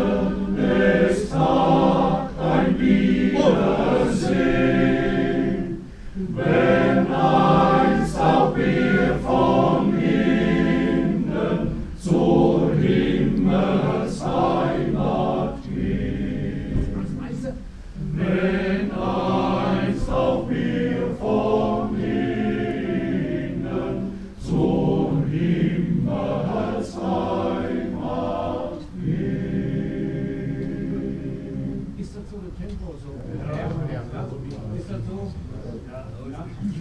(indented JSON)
{
  "title": "Dehrn, funeral, choir rehearsal",
  "date": "2008-08-06 14:00:00",
  "description": "wed 06.08.2008, 14:00\nfuneral, choir rehearses before the ceremony.",
  "latitude": "50.42",
  "longitude": "8.10",
  "altitude": "134",
  "timezone": "Europe/Berlin"
}